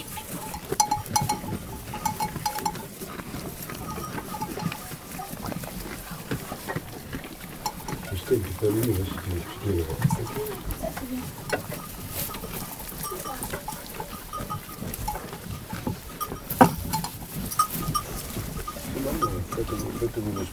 Hures-la-Parade, France - In the sheepfold
In the sheepfold, shepherd is giving feed to the animals. This is an important moment for the animals, so it's going very fast.
2 March